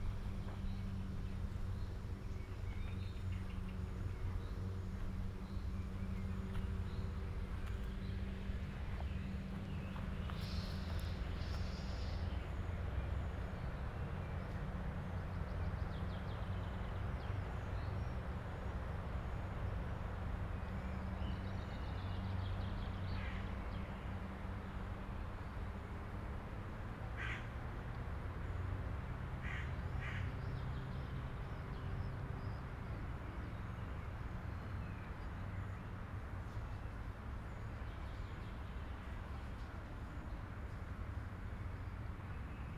Ascolto il tuo cuore, città. I listen to your heart, city, CHapter LXXII - Phase II Sunday Coffee at Valentino park in the time of COVID19 soundwalk
"Phase II Sunday Coffee at Valentino park in the time of COVID19" soundwalk
Chapter LXXII of Ascolto il tuo cuore, città. I listen to your heart, city
Sunday May 10th 2020. First Sunday of Phase II, coffee at the Valentino Park kiosk, sixty one (but seventh day of Phase II) of emergency disposition due to the epidemic of COVID19
Start at 2:05 p.m. end at 3:04 p.m. duration of recording 58’55”
The entire path is associated with a synchronized GPS track recorded in the file downloadable here: